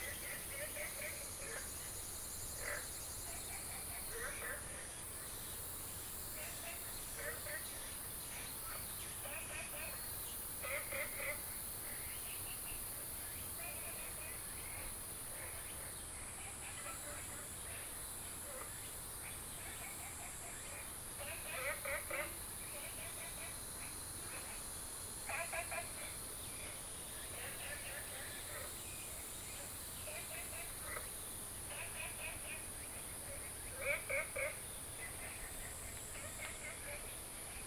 Woody House, 埔里鎮桃米里 - Bird calls and Frogs sound
Frogs sound, Bird calls